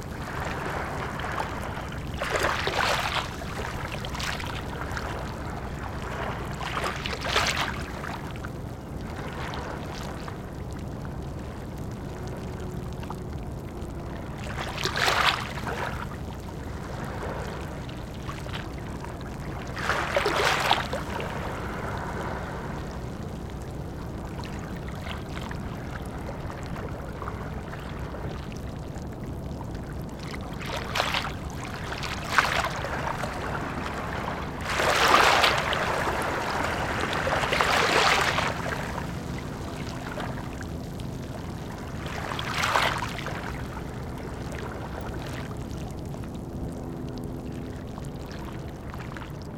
Ouistreham, France - Rocks, Ouistreham, France
Water along the rocks at Ouistreham, France
1 May 2016, 15:30